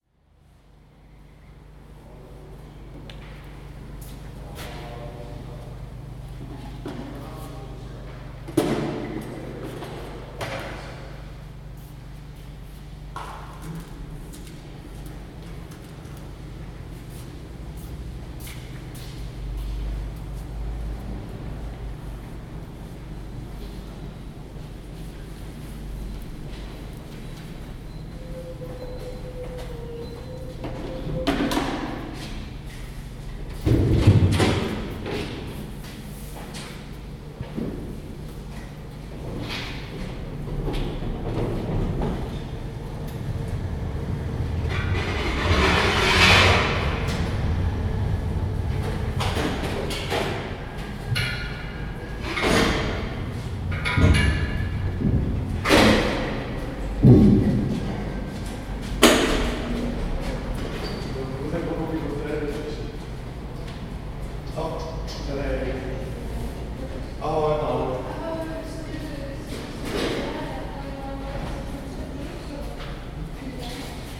Cleaning the gallery before the opening of the exhibition.
Ústí nad Labem-město, Czech Republic, 12 September 2012